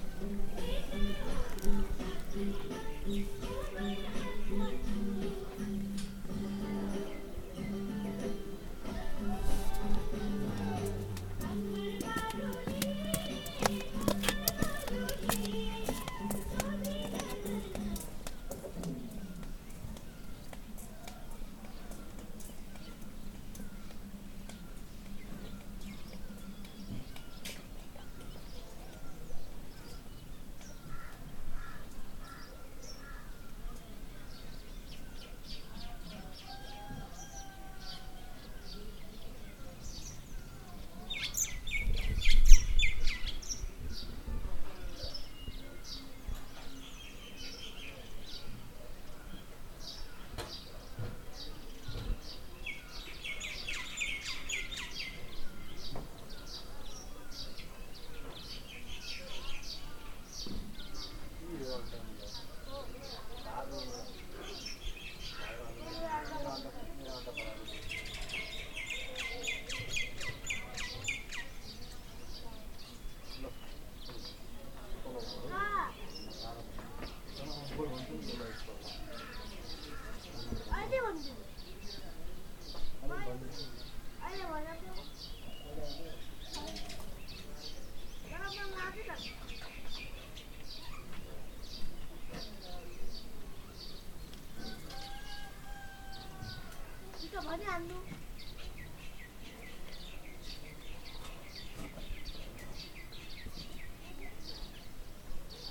Birds and people getting ready in the morning in a small mountain village. Recording with Zoom H5.
Ghandruk, Nepal